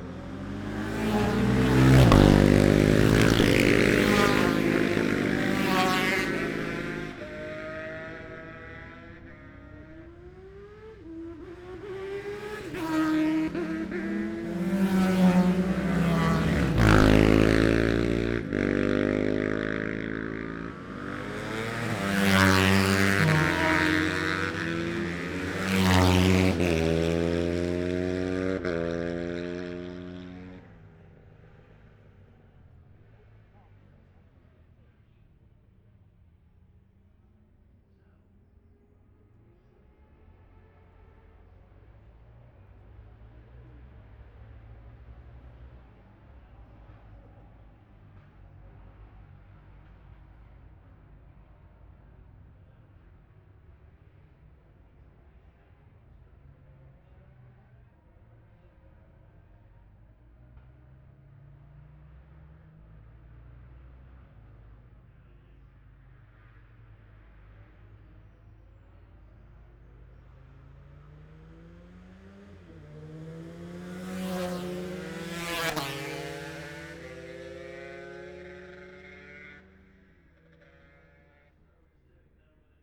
bob smith spring cup ... ultra-lightweights practice ... luhd pm-01 mics to zoom h5 ...